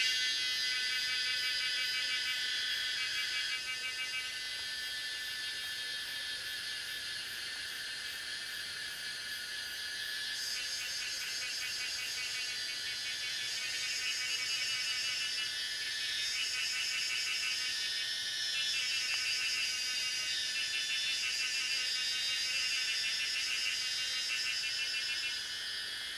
水上巷桃米里, Taiwan - Cicadas sound
early morning, Faced with bamboo, Cicadas sound
Zoom H2n Spatial audio
Puli Township, 水上巷, 14 July 2016